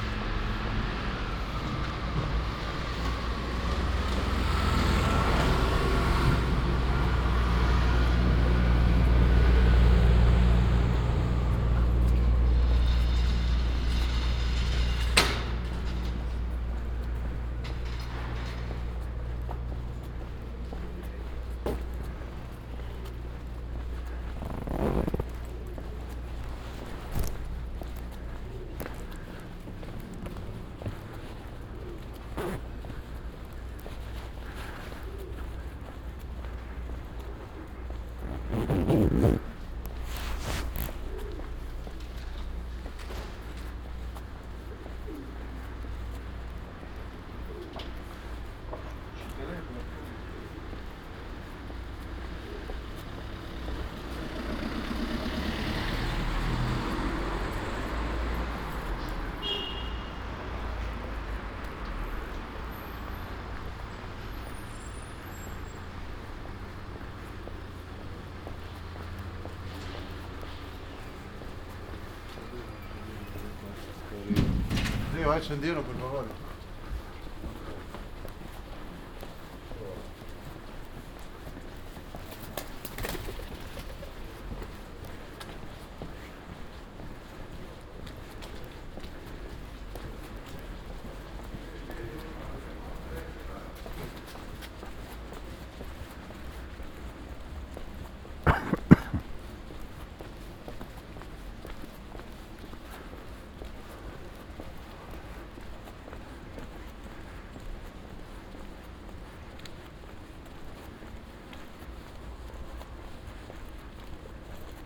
Ascolto il tuo cuore, città, I listen to your heart, city, Chapter CXXXII - "Two years after the first soundwalk in the time of COVID19": Soundwalk
"Two years after the first soundwalk in the time of COVID19": Soundwalk
Chapter CLXXXVIII of Ascolto il tuo cuore, città. I listen to your heart, city
Thursday, March 10th, 2022, exactly two years after Chapter I, first soundwalk, during the night of closure by the law of all the public places due to the epidemic of COVID19.
This path is part of a train round trip to Cuneo: I have recorded the walk from my home to Porta Nuova rail station and the start of the train; return is from inside Porta Nuova station back home.
Round trip is the two audio files are joined in a single file separated by a silence of 7 seconds.
first path: beginning at 6:58 a.m. end at 7:19 a.m., duration 20’33”
second path: beginning at 6:41 p.m. end al 6:54 p.m., duration 13’24”
Total duration of recording 34’04”
As binaural recording is suggested headphones listening.
Both paths are associated with synchronized GPS track recorded in the (kmz, kml, gpx) files downloadable here:
first path:
second path: